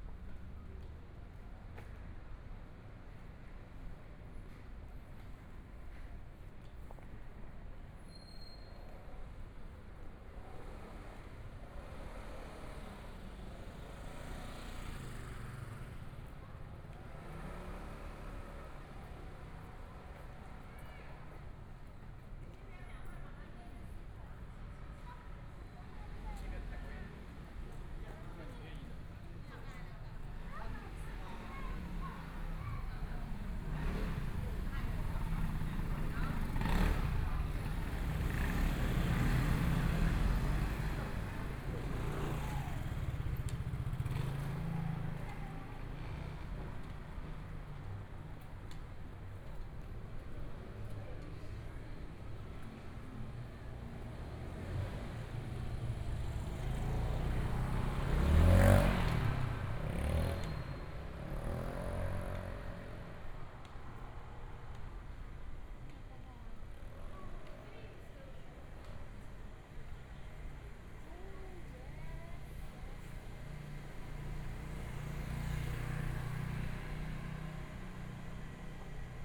Walking across the different streets, Environmental sounds, Motorcycle sound, Traffic Sound, Walking through a variety of different kinds of shops, Binaural recordings, Zoom H4n+ Soundman OKM II
6 February 2014, 14:21